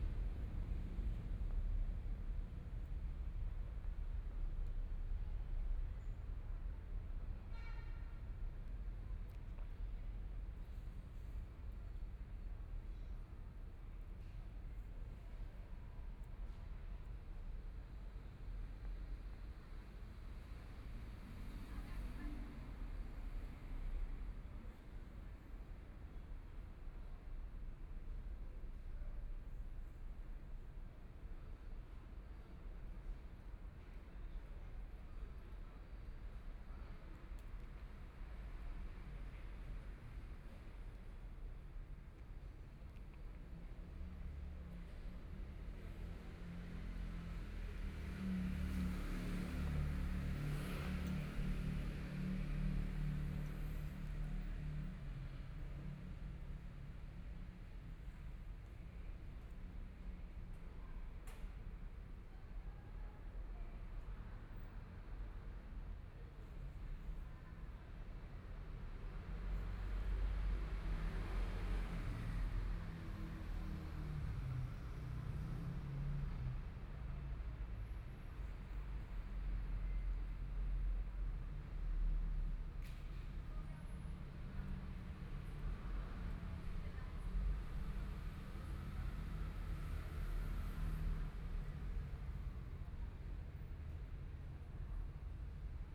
sitting in the Park, The park at night, Community-based park, Dogs barking, Traffic Sound, Binaural recordings, Zoom H4n+ Soundman OKM II
Zhongshan District, Taipei City, Taiwan, 16 February 2014, ~19:00